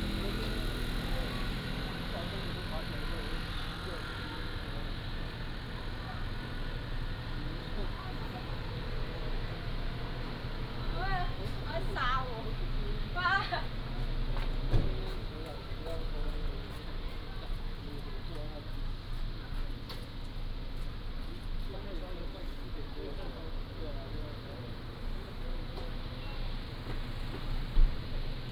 介壽村, Nangan Township - In front of the convenience store
In the Street, In front of the convenience store